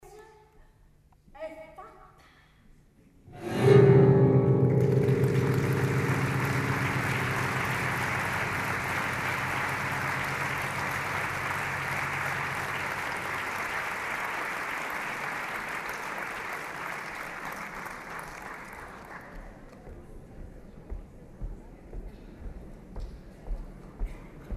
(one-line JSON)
{"title": "Teatro Biondo, 90133 Palermo, Italia (latitude: 38.11733 longitude: 13.36245)", "description": "applausi Locandiera (romanlux) edirol r-09hr\n29 gen 2010", "latitude": "38.12", "longitude": "13.36", "altitude": "20", "timezone": "Europe/Berlin"}